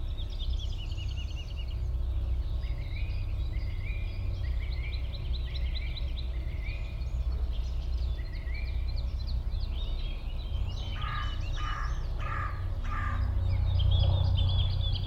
The generator sounds almost still there is so little wind. It's hum is quiet and gives space for the lovely bird song. The song thrush at this spot is a virtuoso and the woodlark so melodic.